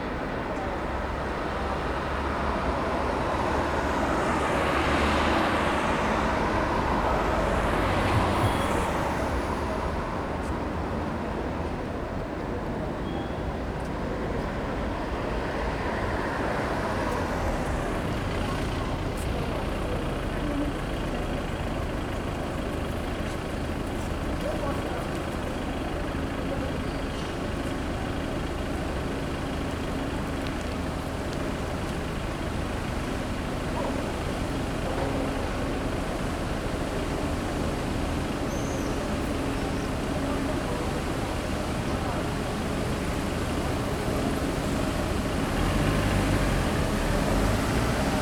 This recording is one of a series of recording, mapping the changing soundscape around St Denis (Recorded with the on-board microphones of a Tascam DR-40).
Saint-Denis, France